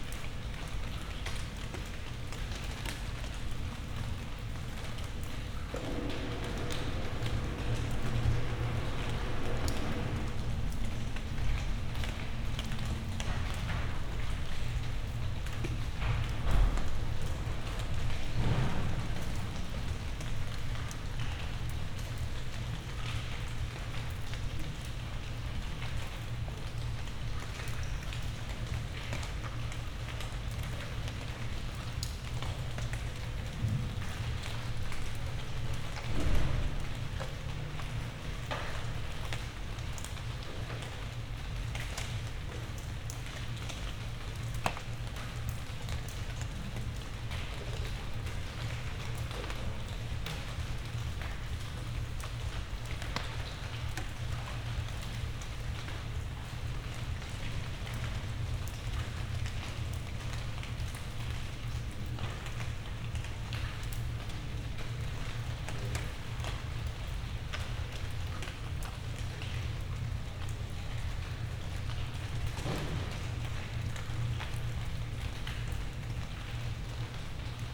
berlin, friedelstraße: backyard window - the city, the country & me: backyard window
stoned tourist party people, neighbour complains about noise, raindrops hitting leaves, strange unidentified "machine" noise
the city, the country & me: july 19, 2012
99 facets of rain